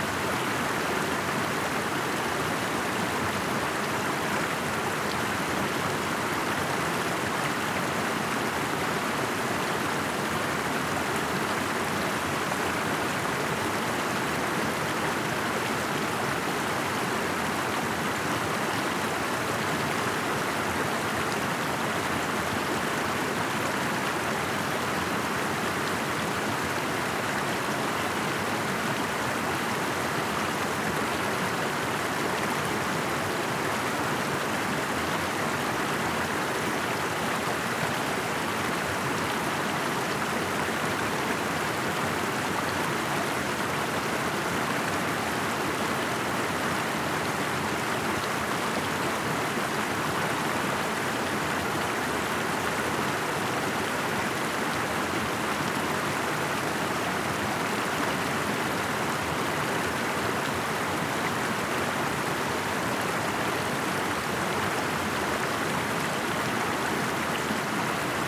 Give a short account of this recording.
small but powerful river. Tech Note : Sony PCM-D100 internal microphones, wide position.